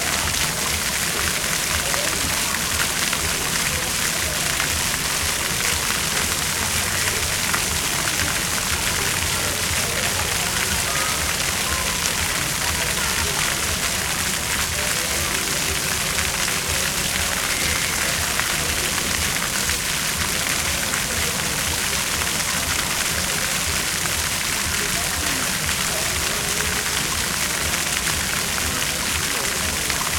Bevkov Trg, Nova Gorica, Slovenia - Fountain in the city 01
The sound of the water of the fountain in the main square in Nova Gorica.